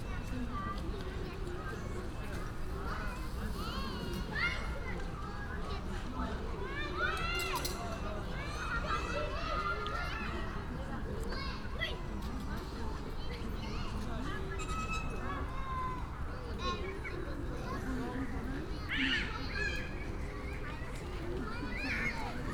Ernst-Busch-Straße, Berlin, Deutschland - housing project, yard ambience
Evening ambience, yard between houses. Since September 2017, around 450 refugees have been living in the residential building, including families with children and single travellers. The local operation is organised by the Stephanus Foundation, which supports the people with a facility management and local social workers.
(SD702, DPA4060)
1 September 2019